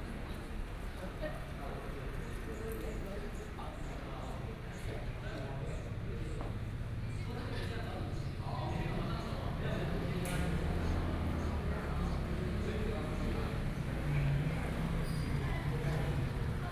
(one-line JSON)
{"title": "Zhiben Station, Taitung City - Outside the station hall", "date": "2014-09-04 17:01:00", "description": "Outside the station hall", "latitude": "22.71", "longitude": "121.06", "altitude": "27", "timezone": "Asia/Taipei"}